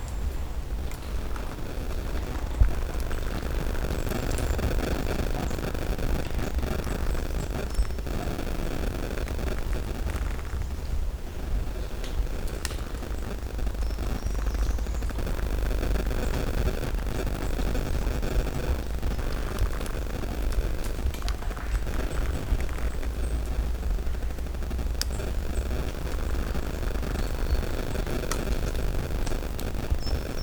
Morasko nature reserve - interference
(binaural) in this spot of the forest the recorder picks up interference of unknown source (most likely phone network). moving a few steps towards any direction and it fades out. There are other spots like that in the forest but it doesn't get so strong. For reference my cell phone was turned off so it shouldn't be cause. (sony d50 + luhd PM-01binaural)
Poznań, Poland, February 2018